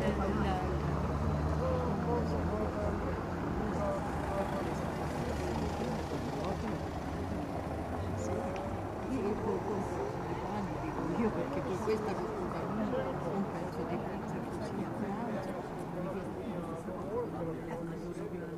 Sundown gives the facade of Orvieto dome its final splendour. People get together at aperetivo time to chatter and look at this bewildering beauty.
Orvieto Terni, Italy, 7 April 2011, 18:36